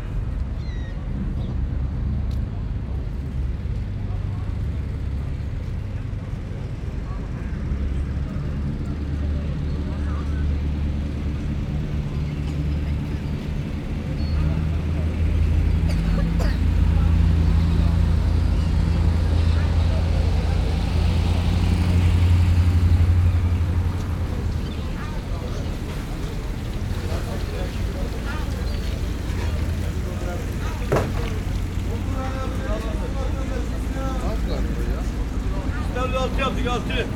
fish market near Galata bridge, Istanbul
walking through the small fish market near the Galata bridge